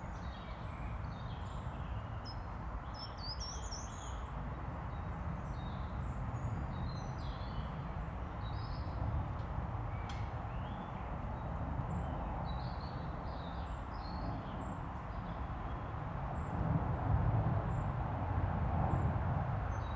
Braník woodland, green woodpecker, buzzards, traffic, Nad Údolím, Praha, Czechia - Braník woodland - green woodpecker, buzzards, traffic
The hillside above Braník is woodland with tall trees and paths for jogging and dog walking. A reasonable variety of birds live there - woodpeckers, buzzards, nuthatches - their songs and calls mixing with the constant sound of traffic from the valley below. Planes roar overhead as they come in to land at Prague airport. This soundscape is very weather dependent. Wind direction particularly has a large effect on loudness of traffic noise and its mix with the woodland sounds. On this track a green woodpecker laughs, a chiffchaff, distant robin, buzzards and nuthatches are heard. Right at the end a local train blows its whistle before leaving Braník station.
Praha, Česko, 9 April 2022, ~10:00